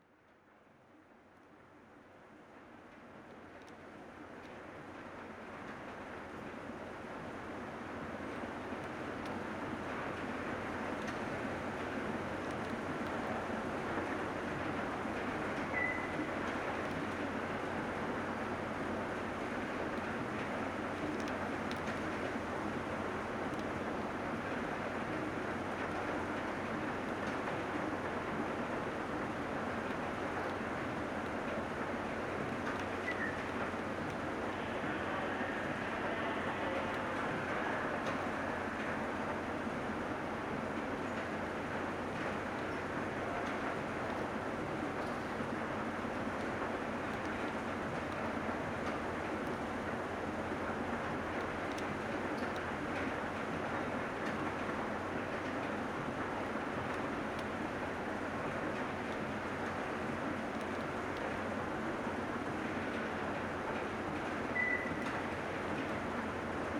대한민국 서울특별시 서초구 반포4동 고속터미널 4층 - Seoul Express Bus Terminal, 4F, Old Escalator
Seoul Express Bus Terminal, 4F, old escalator making low clanging noise with no passenger around.
서울 고속버스터미널 경부선 4층, 오래된 에스컬레이터 소음
9 September 2019